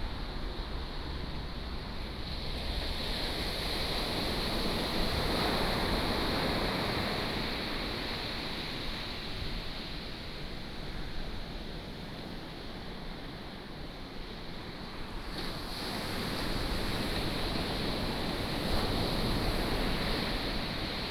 博愛里, Chenggong Township - Sound of the waves
Traffic Sound, Sound of the waves, The weather is very hot
8 September 2014, 11:27